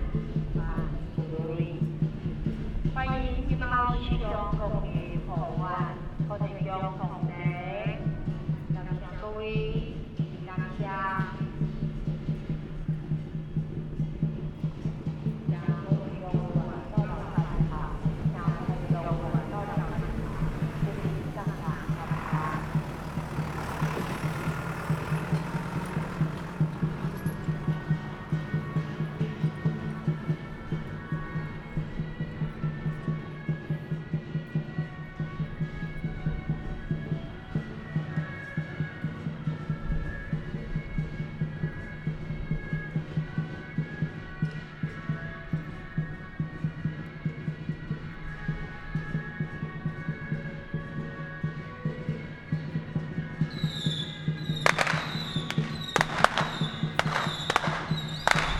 芳苑鄉芳苑村, Changhua County - In front of the temple
In front of the temple, Firecrackers, Traditional temple festivals
Zoom H6 MS